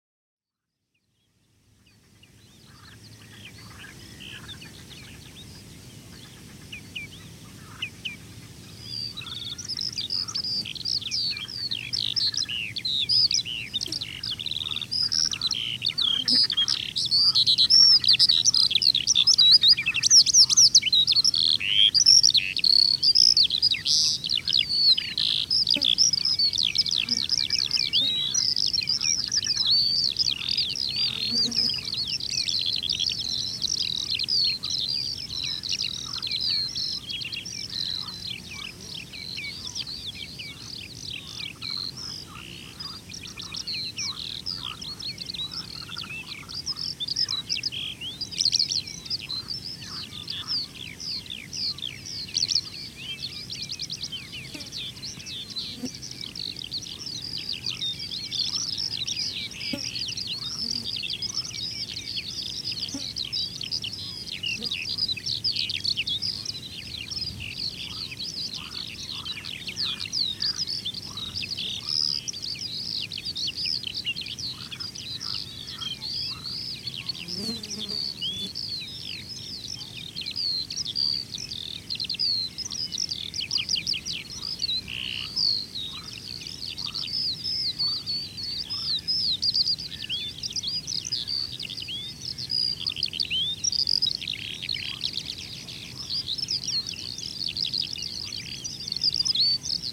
Higham Saltings, Gravesend, UK - Skylarks on Higham Saltings
Skylarks, flies, frogs, and general atmosphere on Higham Saltings, Kent, UK.
South East England, England, United Kingdom, 12 June 2021